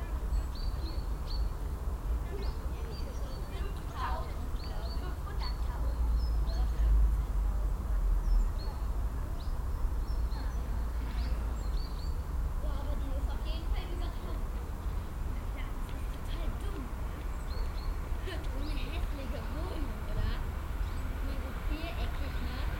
refrath, siegenstrasse, bahnübergang
morgens am bahnübergang, passanten, schulkinder, vorbeifahrt von zwei bahnen
soundmap nrw: social ambiences/ listen to the people - in & outdoor nearfield recordings